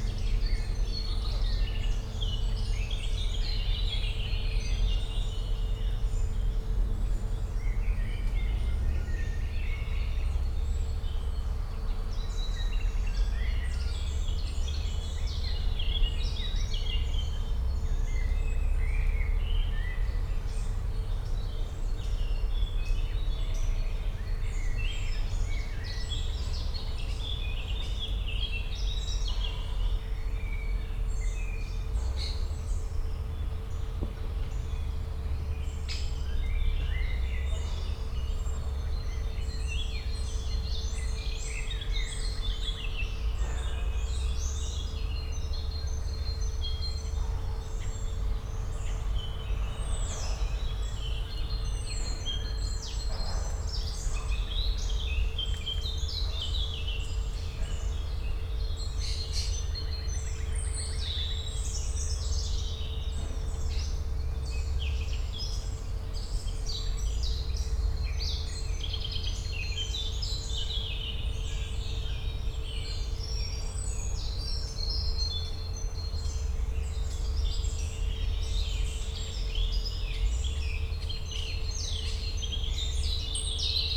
spring ambience in Park Pszczelnik, Siemianowice, distant construction work noise, an aircraft appears and creates a Doppler sound effect with a long descending tone
(Sony PCM D50, DPA4060)